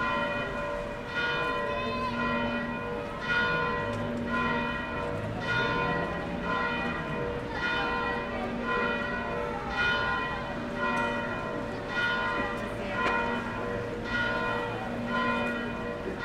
Soundscape on a central street in Brasov, Romania. A church bell ringing, voices, traffic. Some kids in the distance try to sing a carol to ask for money. Recorded with Superlux S502 Stereo ORTF mic and a Zoom F8 recorder.